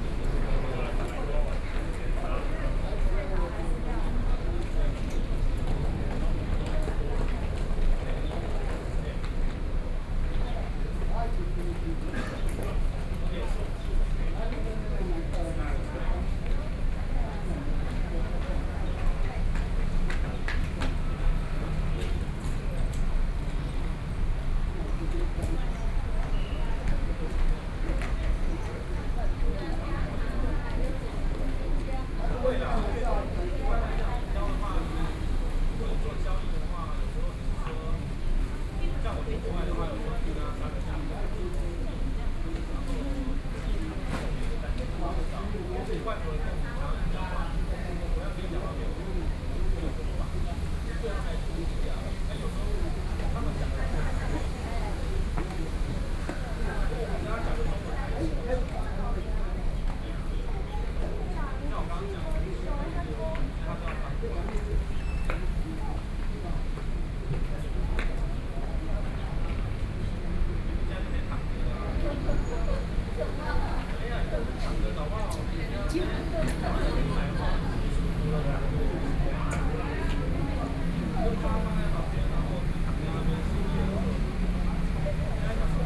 NTU Hospital Station, Taipei City - MRT entrance
in the National Taiwan University Hospital Station, The crowd, Sony PCM D50 + Soundman OKM II